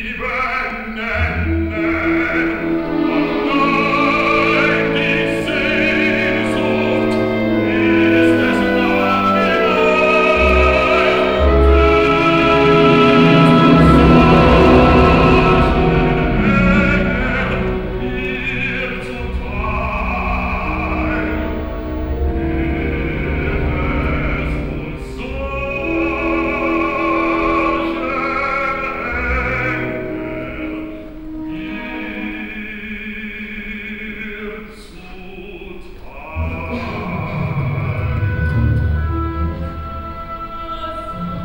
Stadt-Mitte, Düsseldorf, Deutschland - Düsseldorf, opera house, performance
In the auditorium of the "Deutsche Oper am Rhein", during the premiere performance of SehnSuchtMEER by Helmut Oehring. The sound of the orchestra and the voice of David Moss accompanied by the sounds of the audience and the older chairs.
soundmap nrw - topographic field recordings, social ambiences and art places
Nordrhein-Westfalen, Deutschland, European Union